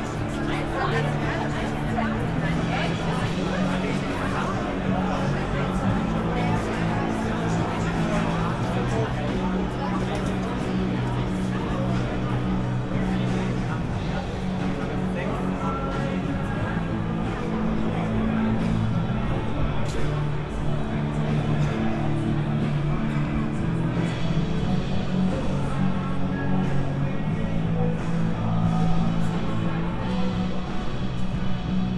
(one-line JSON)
{"title": "berlin, reuterstraße: verkehrsinsel - public events, mixing sounds", "date": "2014-06-21 22:15:00", "description": "mixing sounds of soccer world championship public viewing and a band playing during the fête de la musique, Berlin\n(unedited log of the radio aporee stream, for a live radio session as part of the ongoing exploration of topographic radio practises, iphone 4s, tascam IXY2, primo em 172)", "latitude": "52.49", "longitude": "13.43", "altitude": "43", "timezone": "Europe/Berlin"}